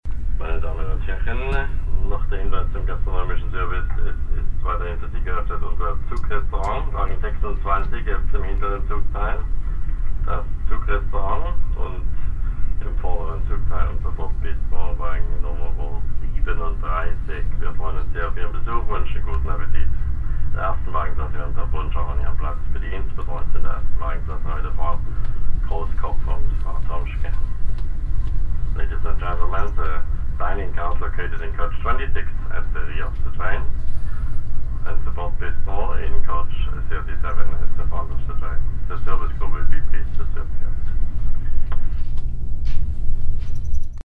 radebau/ naundorf, in the train, announcement
announcement in train while approaching dresden
soundmap d: social ambiences/ listen to the people - in & outdoor nearfield recordings
15 June, 10:00